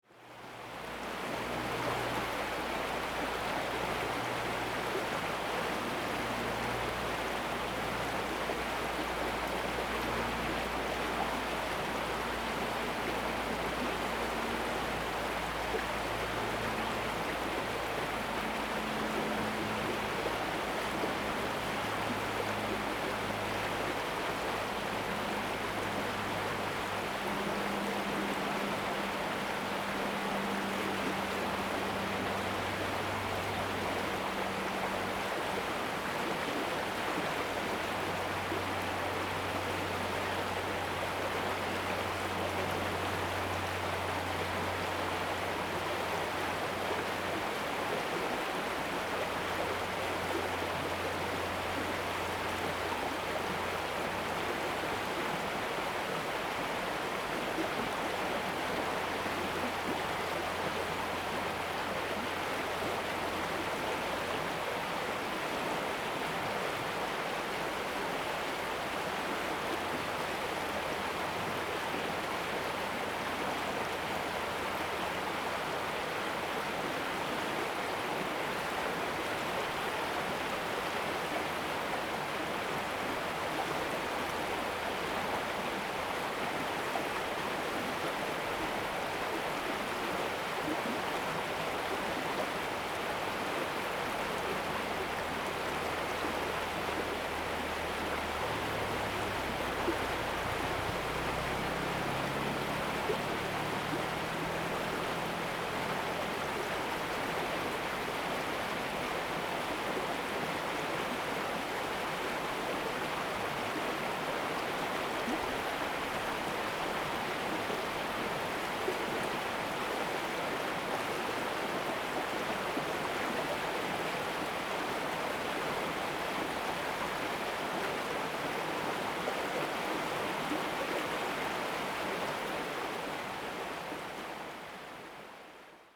豐原里, Taitung City - irrigation waterways
Farmland irrigation waterways, The sound of water
Zoom H2n MS + XY